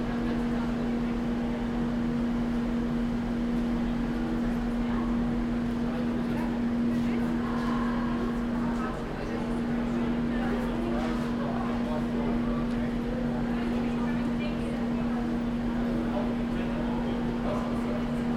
Willy-Brandt-Platz, Erfurt, Germany - Erfurt tram station ambience 2
Glides of tram wheels and people.
Recording gear: Zoom F4 field recorder, LOM MikroUsi Pro.